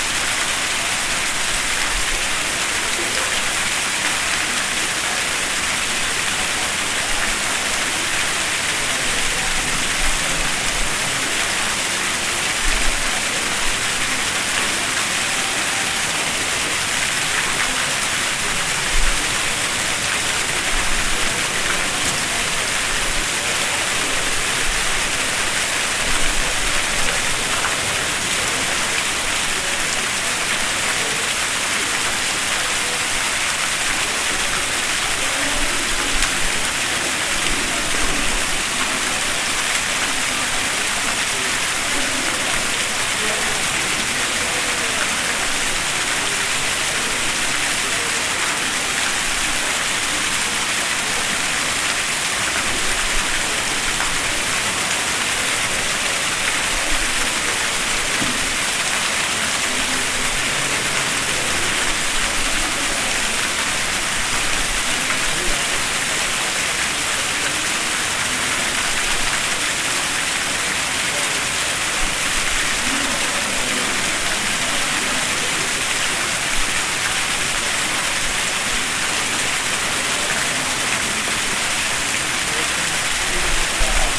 Calgary International Airport, Calgary, AB, Canada - Fountain in Arrivals Hall
Fountain in Arrivals Hall. Recorded with Zoom H4N.